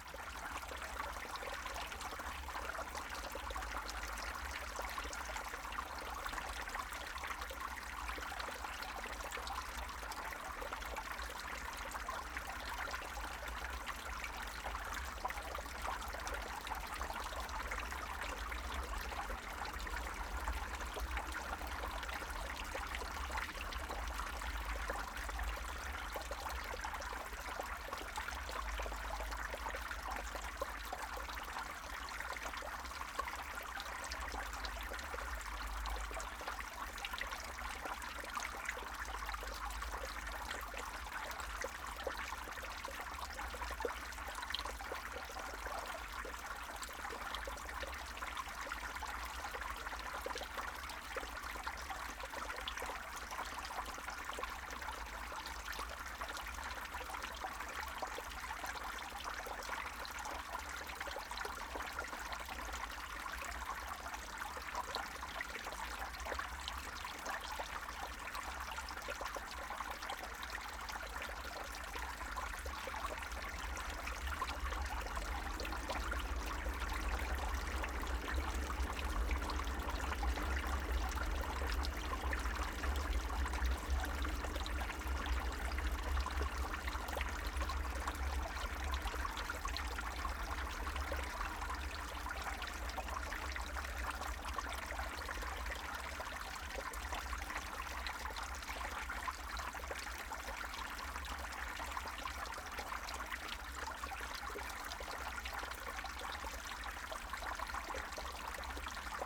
Rosemary's Playground, Woodward Ave. &, Woodbine St, Ridgewood, NY, USA - Snow melting at Rosemary's Playground
The last blizzard left Rosemary's Playground covered with a thick blanket of snow.
This recording captures the sound of the snow melting and going through the drainage system.